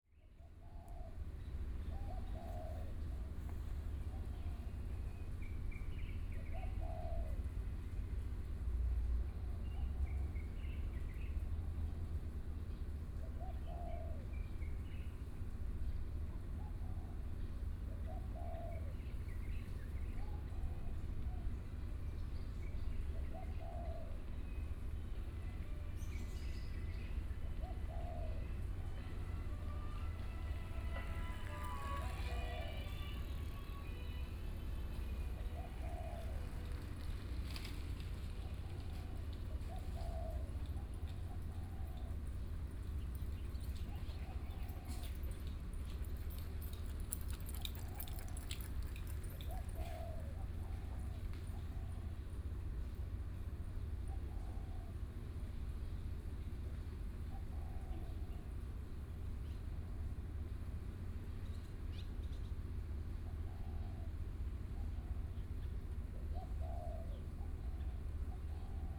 Dayong Rd., Yancheng Dist. - Pigeon
Birds singing（Pigeon, At the intersection, Sound distant fishing, People walking in the morning, Bicycle
Kaohsiung City, Taiwan, 14 May 2014, 06:34